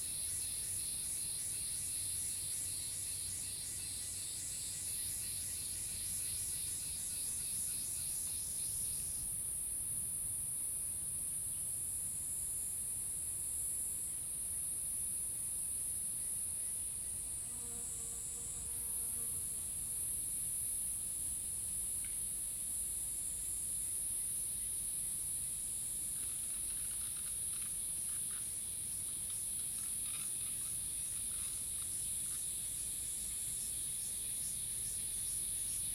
埔里鎮南村里, Nantou County, Taiwan - In the woods
Cicadas called, In the woods, Birds called
Zoom H2n MS+XY